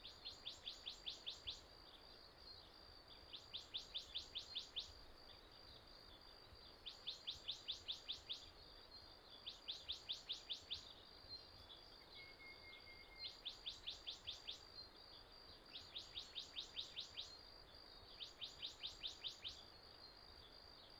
達保農場, Tuban, Daren Township - Various bird calls
early morning, In the bush, Various bird calls, Insect noise, Stream sound
Taitung County, Taiwan, 6 April 2018, 05:37